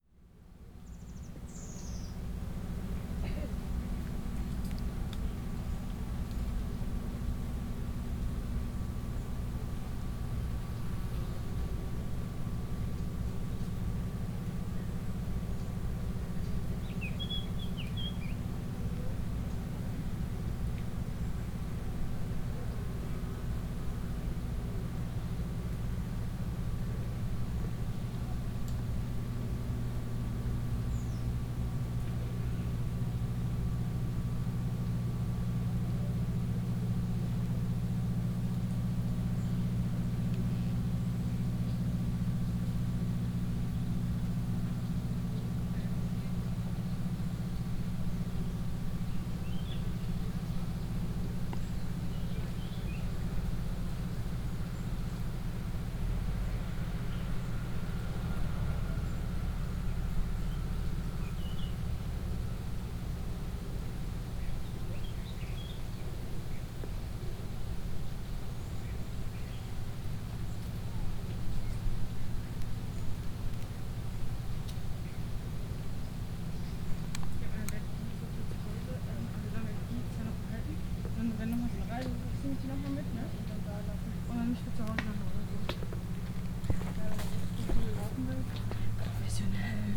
La Spezia province, Cinque Terre national park - trail 6 towards Volastra
on a mountain trail towards Volastra. hikers passing by occasionally. diesel engine of a ferry coming from the sea far away.